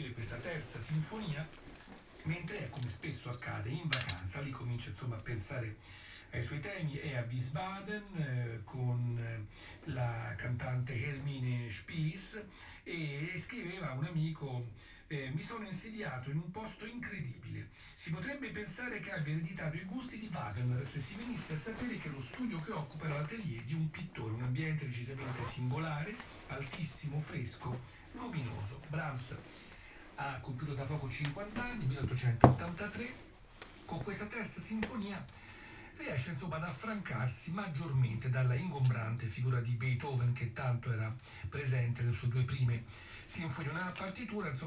Wednesday March 11 2020. Following yesterday evening recording: walking in the square market at Piazza Madama Cristina, district of San Salvario, Turin the morning after emergency disposition due to the epidemic of COVID19.
Start at 11:50 a.m., end at h. 12:15 p.m. duration of recording 25'1O''
The entire path is associated with a synchronized GPS track recorded in the (kml, gpx, kmz) files downloadable here:
11 March 2020, ~12:00